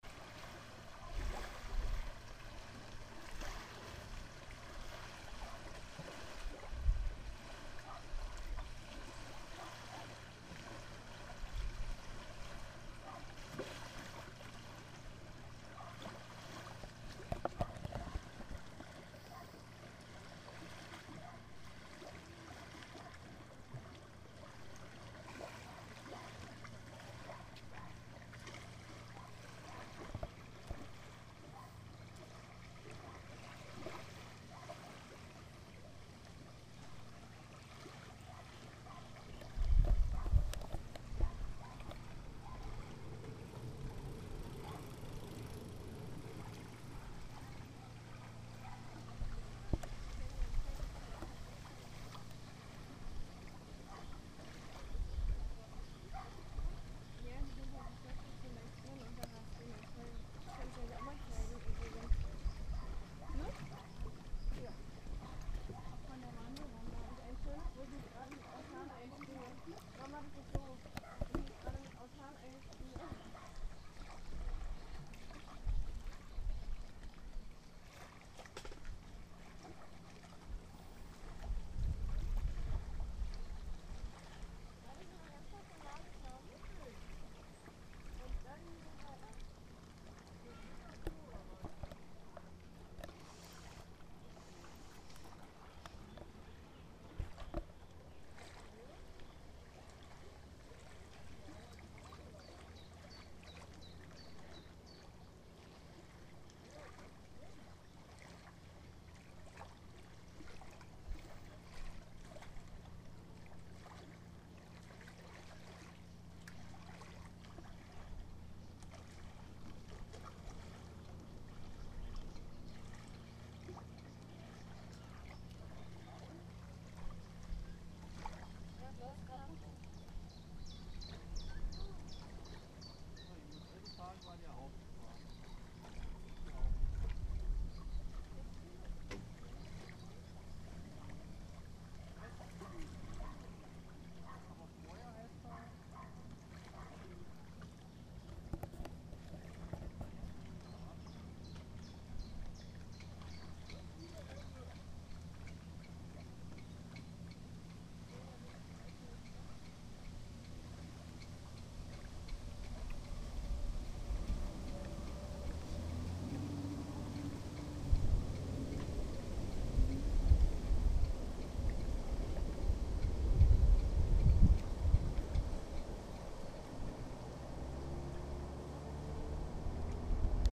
Caputh, Deutschland - on the banks of the Schwielowsee
A few minutes on the Schielowsee's banks. I love it most when natura naturans' & natura naturata's sounds mingle.
Schwielowsee, Germany